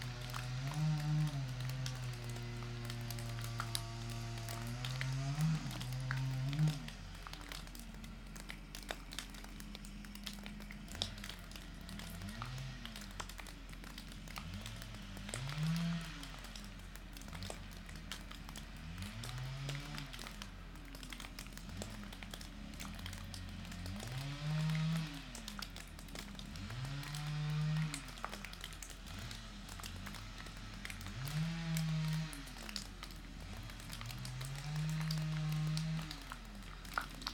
Adomynė, Lithuania, abandoned school
Abandoned school building. Waterdrops from the roof and chainsaw on the other side of the street
Panevėžio apskritis, Lietuva, 26 February 2022, 14:10